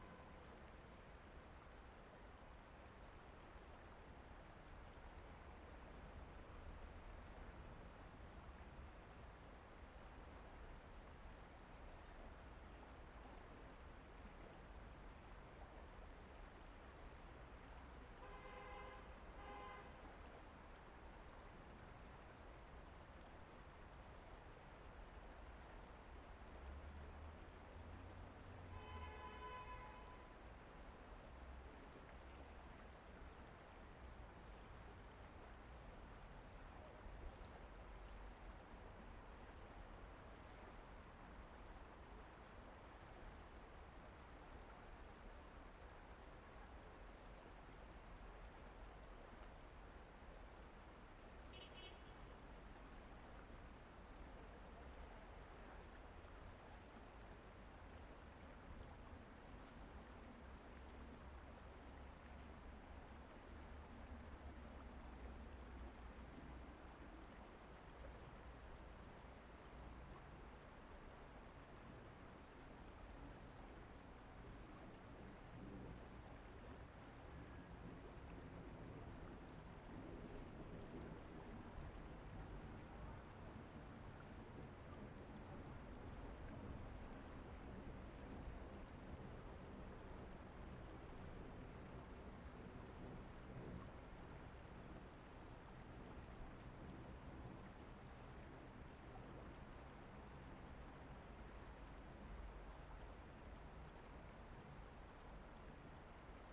Piazza Castegnate, Castellanza VA, Italy - The Olona river in front of the library in Castellanza (VA)
Recorded with the XY capsule (120°) of my Zoom H6, from the river bank.
7 January 2020